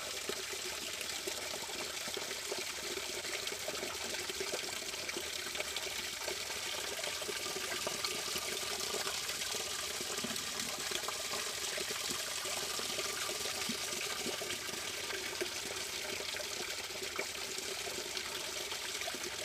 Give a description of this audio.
stream above a Woodbridge trail running into a Strawberry creek